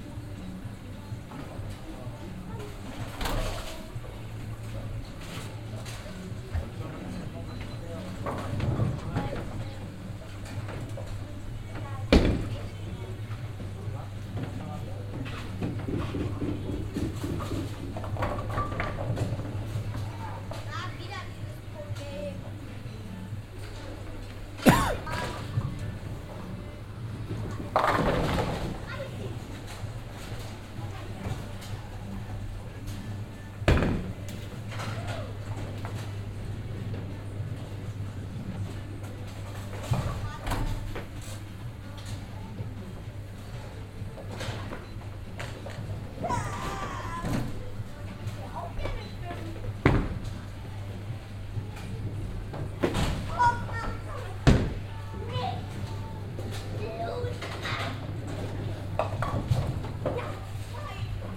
Inside a game hall. First general atmosphere with music and sounds from some game console then focussing on the sound of people playing bowling within the halls basement. recorded daywise in the early afternoon.
Projekt - Klangpromenade Essen - topographic field recordings and social ambiences